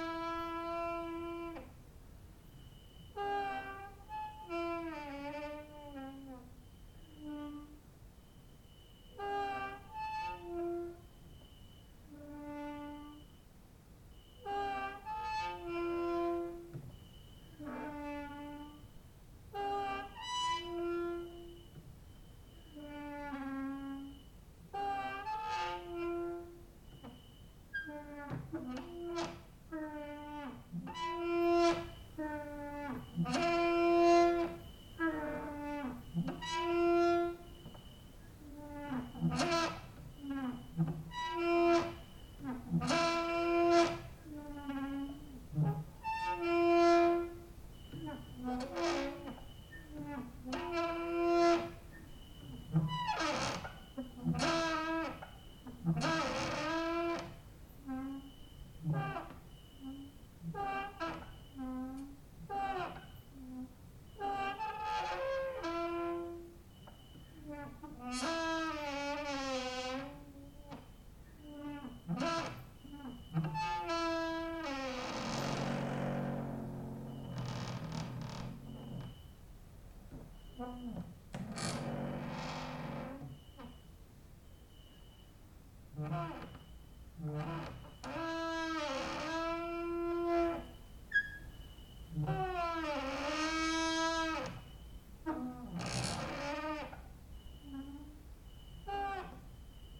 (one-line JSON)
{"title": "Mladinska, Maribor, Slovenia - late night creaky lullaby for cricket/11/part 2", "date": "2012-08-19 00:08:00", "description": "cricket outside, exercising creaking with wooden doors inside", "latitude": "46.56", "longitude": "15.65", "altitude": "285", "timezone": "Europe/Ljubljana"}